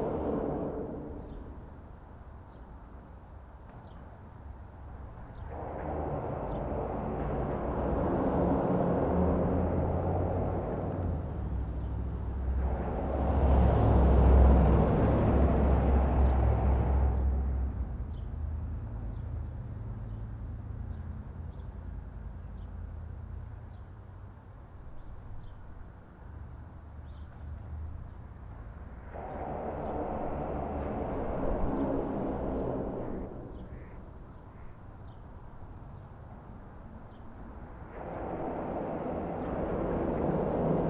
Manistee River Channel (Maple St.), Manistee, MI - Underneath the Maple Street Bridge
Vehicles pass overhead on a Tuesday afternoon, a few steps off the River Walk. Stereo mic (Audio-Technica, AT-822), recorded via Sony MD (MZ-NF810, pre-amp) and Tascam DR-60DmkII.
MI, USA, 22 March 2016